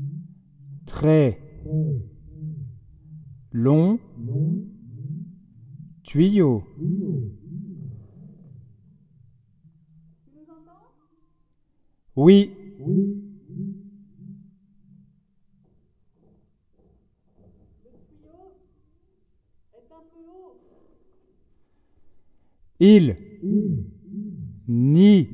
In an underground mine, we are acting with a tube, using it like a big phone. This tube is enormous as it's 300 meters long. What we can find in a mine is just funny.
France